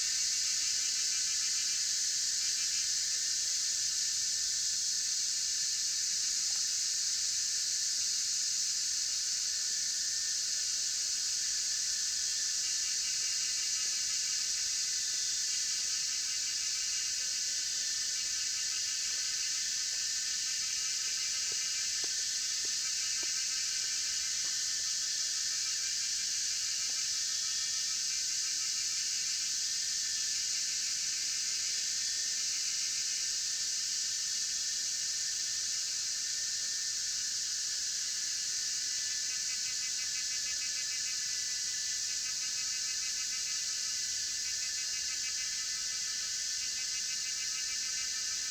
水上巷 桃米里, Nantou County - Cicadas cry
Cicadas cry, Frogs chirping, Insects called
Zoom H2n MS+XY